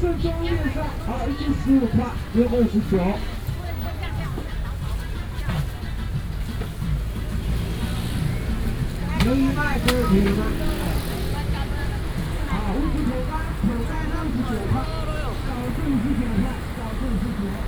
Minsheng St., Hukou Township, Hsinchu County - Walking through the traditional market
All kinds of vendors selling voice, Walking through the traditional market, Garbage collection car
Hukou Township, Hsinchu County, Taiwan, 18 January, 11:23